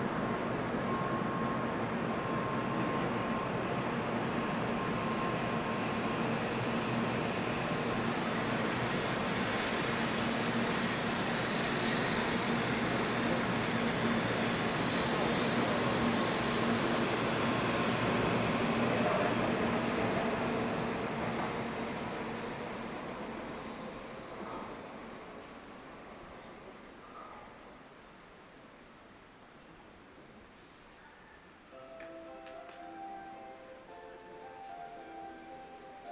Shin-Kobe Station - Shinkansen platform
Waiting for the Shinkansen Hikari to Nagoya.
Recorded with Olympus DM-550.
近畿地方, 日本, 9 November 2019